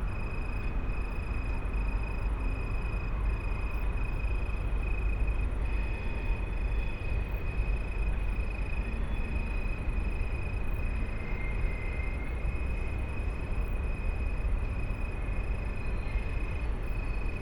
Mediapark, Köln - tree crickets, trains
place revisited, tree crickets have a different pitch tonight
(Sony PCM D50, Primo EM172)